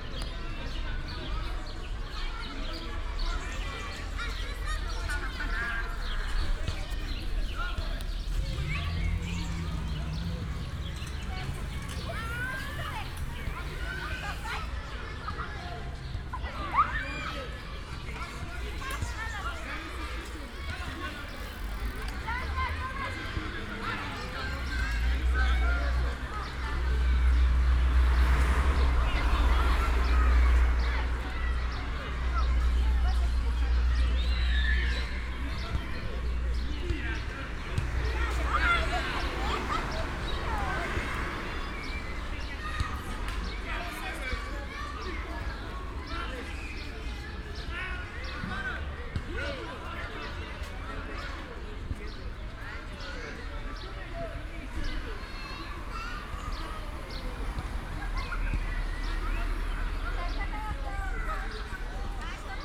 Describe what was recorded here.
playground ambience at Treptower Strasse. this recording is part of a sonic exploration of the area around the planned federal motorway A100. (SD702 DPA4060)